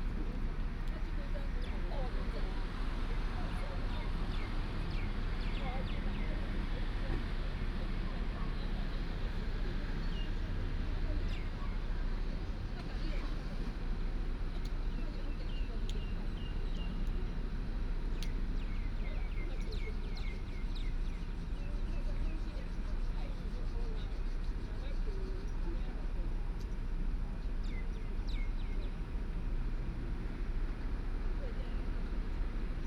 空軍五村, Hsinchu City - PARKING LOT
A group of older people are here to chat and exercise, Birds sound, traffic sound, PARKING LOT, The parking lot was formerly the residence of the soldier, Binaural recordings, Sony PCM D100+ Soundman OKM II